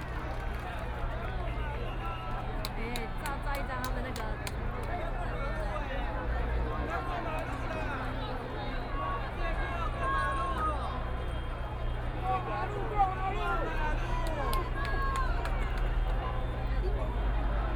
Zhongxiao W. Rd., Taipei City - Road corner
Protest actions are expected to be paralyzed major traffic roads, Opposition to nuclear power, Protest
Sony PCM D50+ Soundman OKM II
Taipei City, Taiwan, 27 April 2014, 16:08